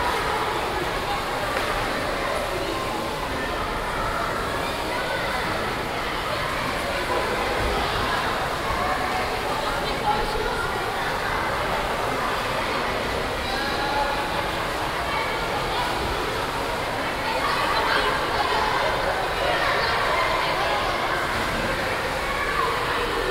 refrath, saaler mühle, mediterana, hallenbad
soundmap: refrath/ nrw
refrath, mediterana hallenbad, letzte badgeräusche vor dem umbau der alten hallenanlage im juli 2008
project: social ambiences/ listen to the people - in & outdoor nearfield recordings